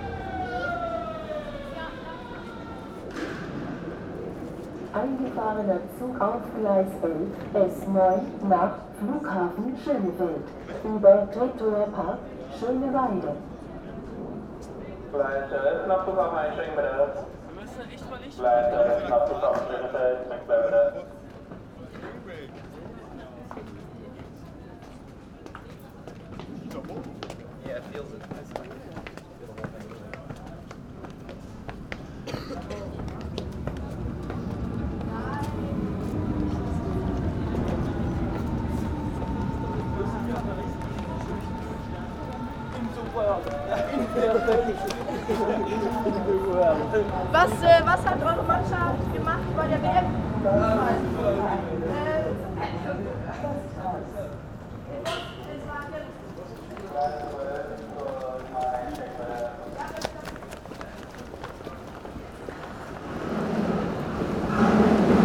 Berlin Ostkreuz - station ambience, steps on stairs
Berlin Ostkreuz, traffic cross, steps on stairs, station ambience
Berlin, Deutschland, 25 July